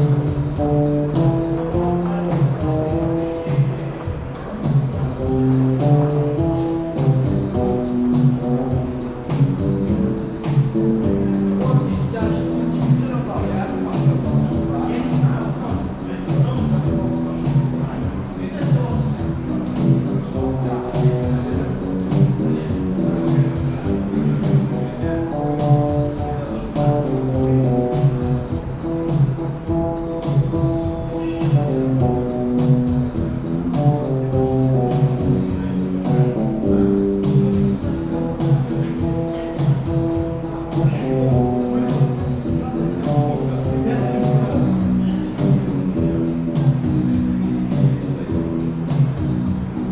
Minsk, Prospekt Nezavisimosti - No Wave Busker
Busker in an underpass, playing songs from Soviet films on his bass accompanied by a drum machine.
Minsk, Belarus